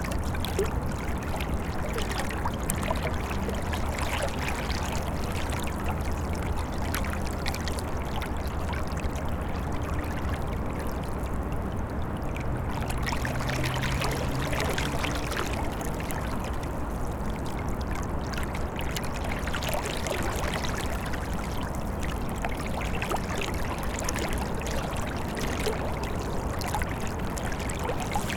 Kaunas, Lithuania - Frozen river shore
Recording of a river flowing past a melting frozen ice ridge. Small pieces of ice are floating by and sometimes bumping into the melted edge. Cityscape and birds are also heard in the distance. Recorded with ZOOM H5.
Kauno miesto savivaldybė, Kauno apskritis, Lietuva, 23 February 2021, ~17:00